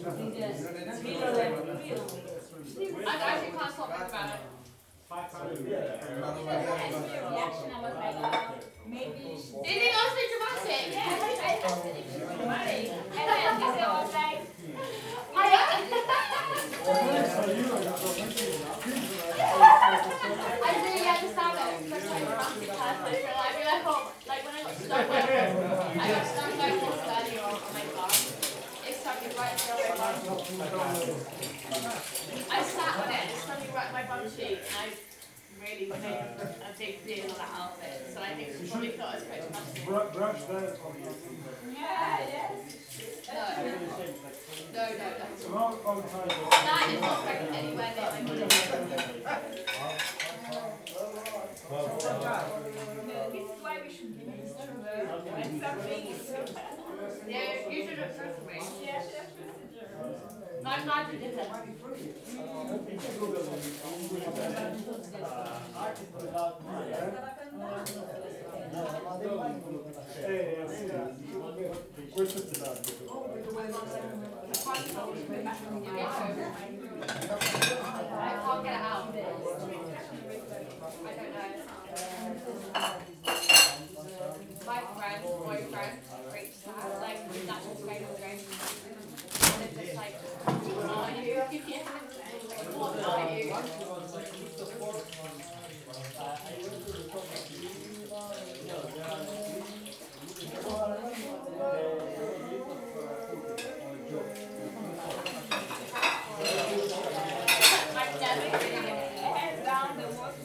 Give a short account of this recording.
Music and contemporary arts at Stone Oven House, Rorà, Italy, Set 2 of 3: One little show. Two big artists: Alessandro Sciaraffa and Daniele Galliano. 29 August. Set 2 of 3: Saturday, August 30th, h.11:40 p.m.